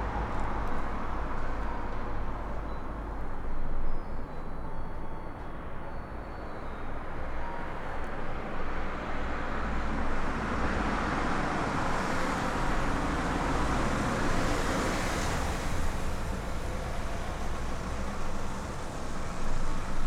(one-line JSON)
{"date": "2011-12-24 18:18:00", "description": "Niévroz, Rue Henri Jomain, Christmas melody coming from an electronic device.\nSD-702, Me-64, NOS.", "latitude": "45.83", "longitude": "5.07", "altitude": "186", "timezone": "Europe/Paris"}